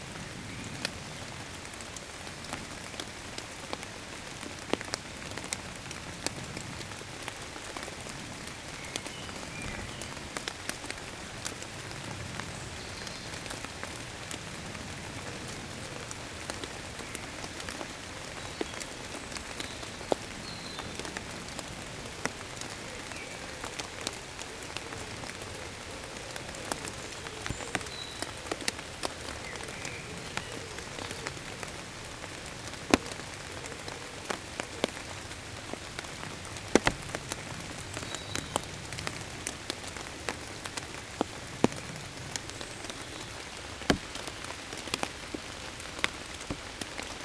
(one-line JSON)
{"title": "Mont-Saint-Guibert, Belgique - Rain in forest", "date": "2016-05-22 21:40:00", "description": "A constant rain is falling since this morning. All is wet everywhere. In the forest, birds are going to sleep, it's quite late now. The rain is falling on maple leaves. Water tricle everywhere.", "latitude": "50.64", "longitude": "4.61", "altitude": "85", "timezone": "Europe/Brussels"}